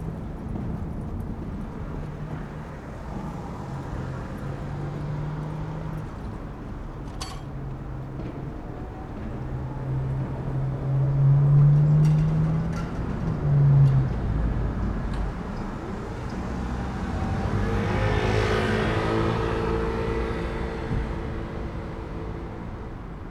Berlin: Vermessungspunkt Friedelstraße / Maybachufer - Klangvermessung Kreuzkölln ::: 06.12.2011 ::: 15:41
Berlin, Germany, 6 December 2011, 15:41